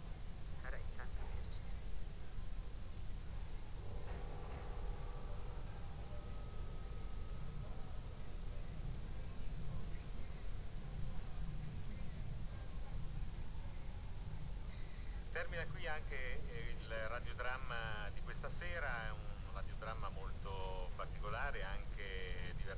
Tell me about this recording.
"Round Midnight Easter Friday on terrace with radio in the time of COVID19": soundscape. Chapter CLXV of Ascolto il tuo cuore, città. I listen to your heart, city, Thursday April 1st 2021. Fixed position on an internal terrace at San Salvario district Turin, One year and twenty-two days after emergency disposition due to the epidemic of COVID19. Portable transistor radio tuned on RAI RadioTre. Start at 11:51 p.m. end at 00:11 a.m. duration of recording 20’12”